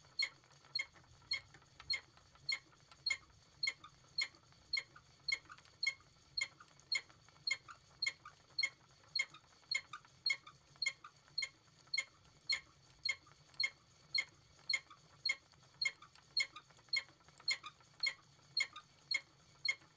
Windermere, Cumbria, UK - Diana's squeaky wheel
This is the sound of Diana spinning Herdwick fleece on her squeaky old wheel, in front of the fire.